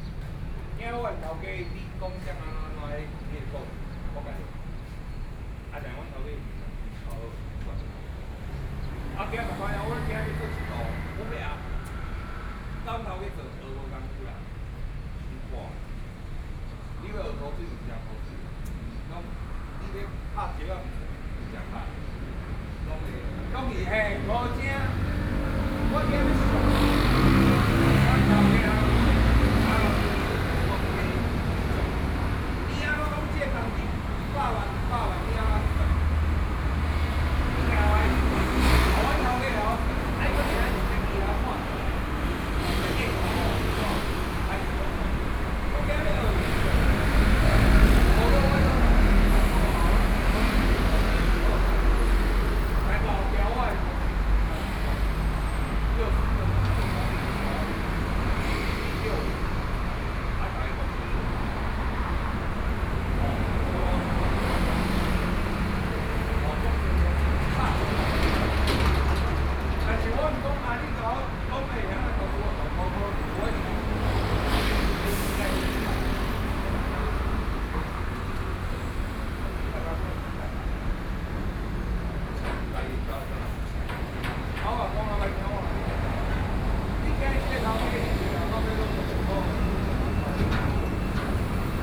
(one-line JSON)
{"title": "Sec., Zhongzheng Rd., 五結鄉二結村 - In front of the convenience store", "date": "2014-07-25 17:46:00", "description": "In front of the convenience store, Traffic Sound\nSony PCM D50+ Soundman OKM II", "latitude": "24.71", "longitude": "121.77", "altitude": "11", "timezone": "Asia/Taipei"}